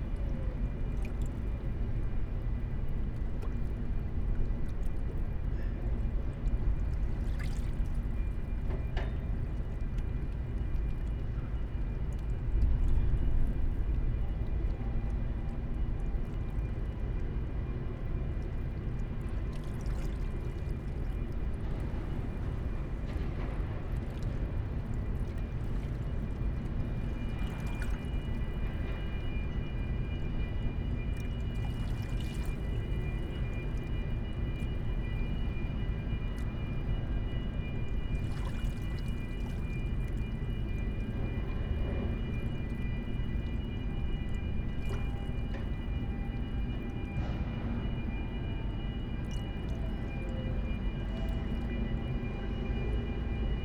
Triq San Patrizju, Kalafrana, Birżebbuġa, Malta - distant Freeport sounds
gentle waves in small rock bay, distant harbour sounds, mics lying in the sand.
(SD702 DPA4060)